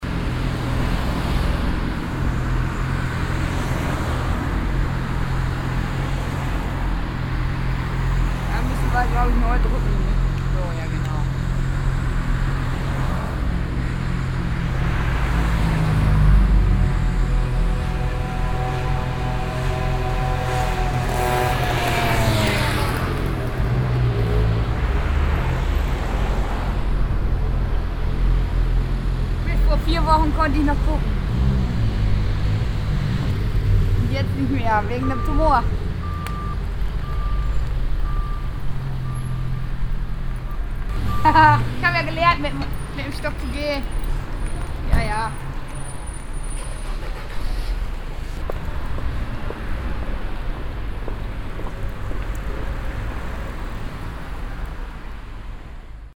lippstadt, cappelstraße, at traffic sign
a blind woman at a traffic sign, waiting then crossing the street while talking about her disease
soundmap nrw - social ambiences and topographic field recordings
Lippstadt, Germany